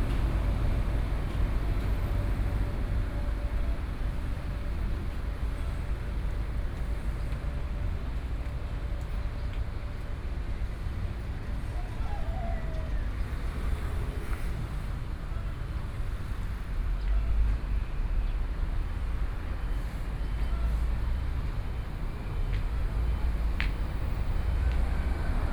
The main road, Traffic Sound, Washing plant, Chicken farm